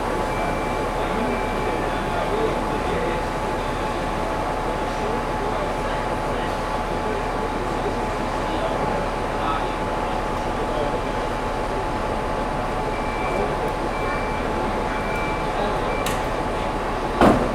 Train station, Nova Gorica, Slovenia - The sounds on the train station
Waiting for the train to leave the station. But the train never leaves.
10 June 2017